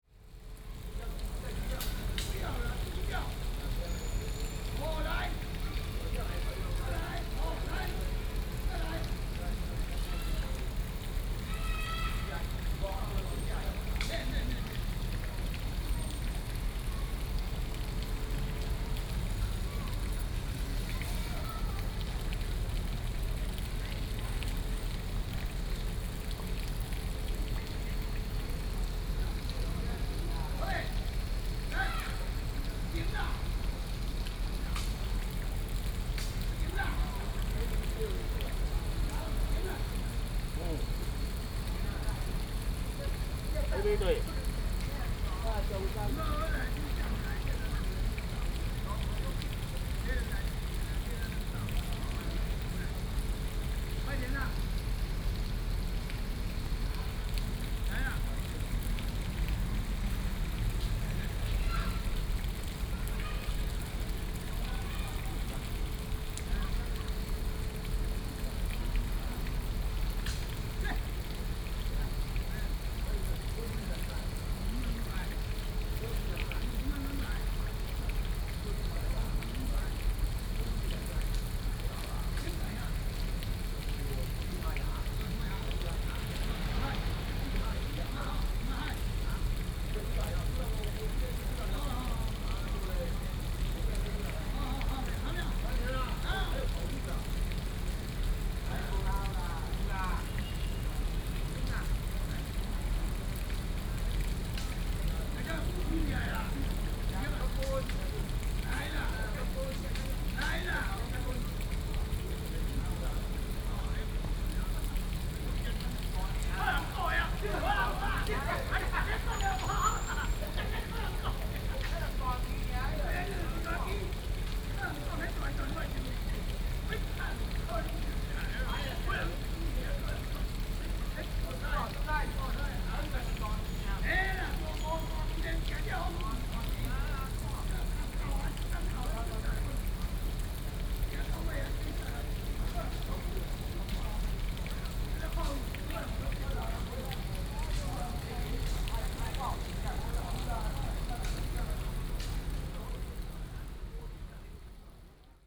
Sitting next to the fountain, Many elderly people in the park
Banqiao District, New Taipei City, Taiwan, 29 July 2015, ~17:00